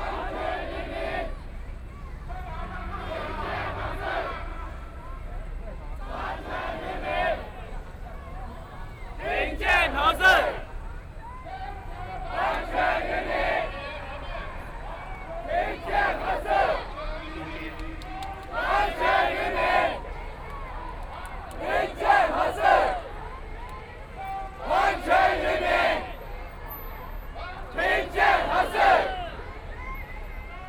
Zhong Xiao West Road, Taipei City - No-nuke Movement
No-nuke Movement occupy Zhong Xiao W. Rd.
Sony PCM D50+ Soundman OKM II
統一元氣館 Zhongzheng District, Taipei City, Taiwan, 27 April 2014, 16:17